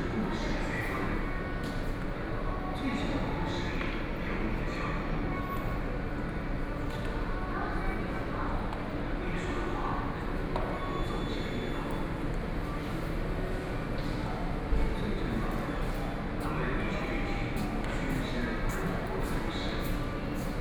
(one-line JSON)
{"title": "Miaoli Station, Taiwan - walk in the Station", "date": "2013-10-08 09:57:00", "description": "in the Station hall, walk into the Platform, Zoom H4n+ Soundman OKM II", "latitude": "24.57", "longitude": "120.82", "altitude": "50", "timezone": "Asia/Taipei"}